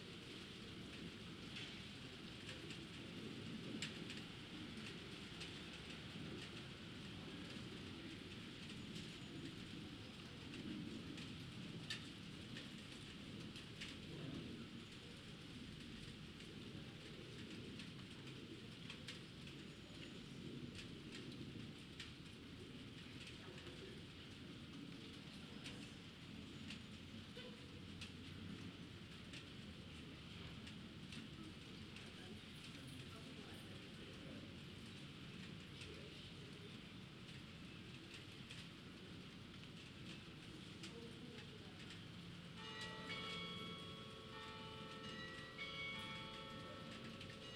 Münzgasse, Tübingen - Münzgasse, Tübingen 2
Mittelalterliche Gasse mit Fachwerkhäusern, Fußgängerzone.
Kirchenglocken, Fußgänger, Fahrrad, leichter Regen.
Church bells, pedestrians, bicycle, light rain.